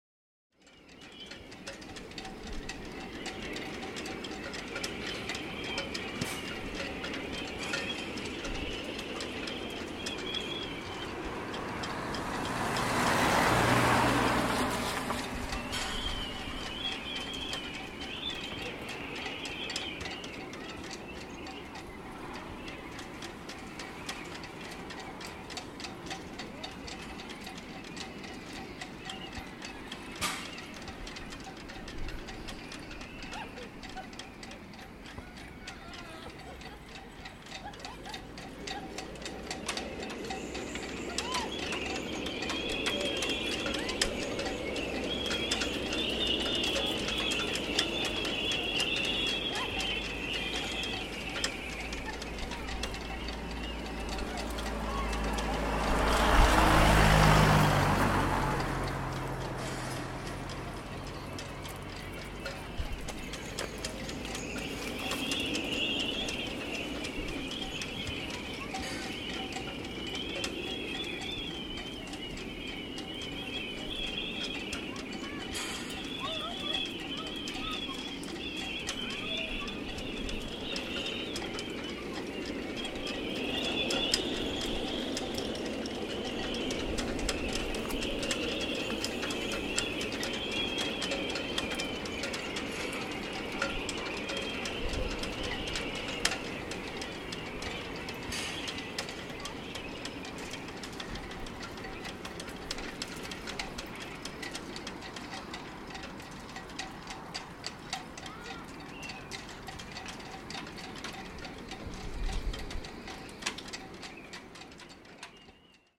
Quay Cottage, Beach Rd, Clifden, Co. Galway, Irland - Harbour Clifden
A sunny november day in Clifden/ Connamara, but weather change is upcoming. The sailing ships are already prepared for winter and stored on land. Around the corner children play. A car drives by.
3 November 2018, 15:00